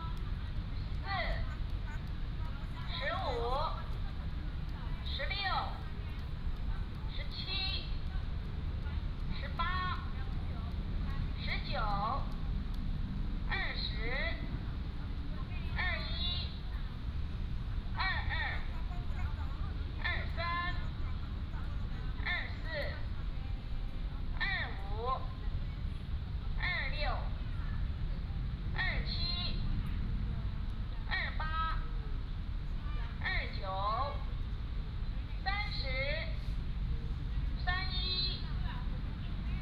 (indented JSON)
{
  "title": "臺南公園, Tainan City - Healthy gymnastics",
  "date": "2017-02-18 16:20:00",
  "description": "Many elderly people are doing aerobics",
  "latitude": "23.00",
  "longitude": "120.21",
  "altitude": "26",
  "timezone": "GMT+1"
}